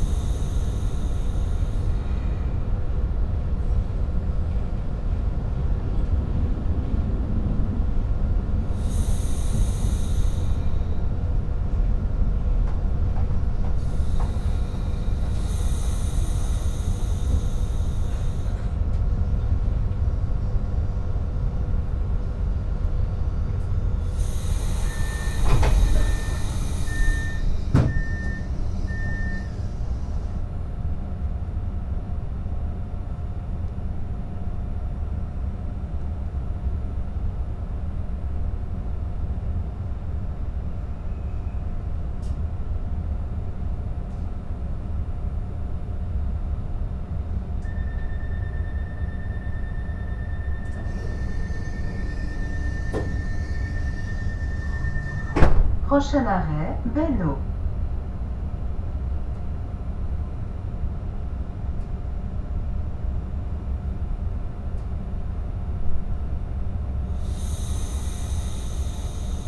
Saint-Maurice de Beynost, Express Regional Train.
Le TER Lyon-Ambérieu vers Saint-Maurice de Beynost.
Miribel, France